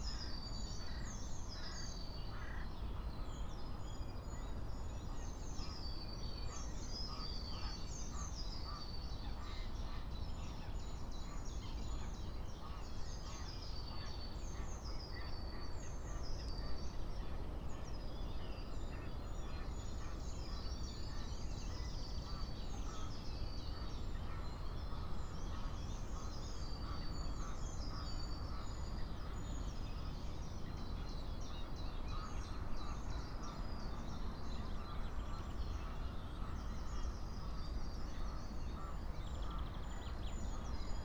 06:00 Berlin Buch, Lietzengraben - wetland ambience